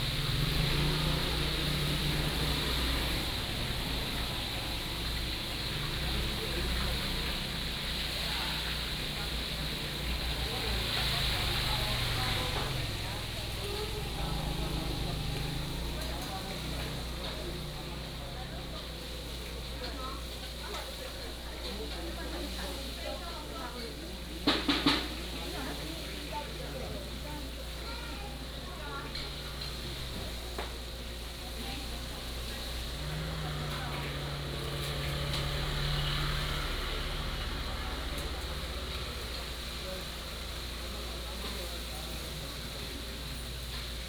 Juguang Rd., Jincheng Township - Traditional alley
Traditional alley, Traffic Sound
金門縣 (Kinmen), 福建省, Mainland - Taiwan Border, 3 November 2014